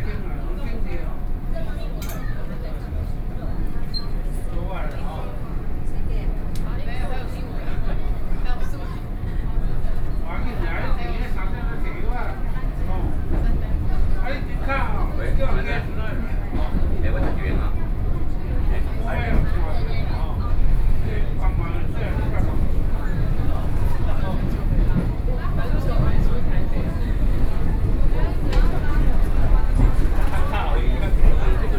Wanhua District - Taiwan Railway
from Taipei station to Wanhua Station, Sony PCM D50 + Soundman OKM II
Zhongzheng District, 小南門, August 2013